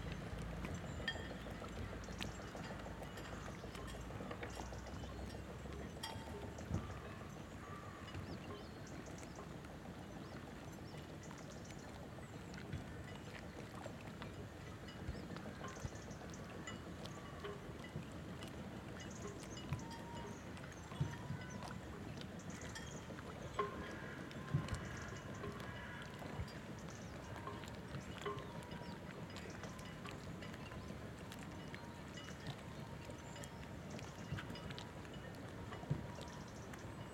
Port de Merrien, Moëlan-sur-Mer, France - Le port un matin en septembre.
Un matin dans le Finistère sud.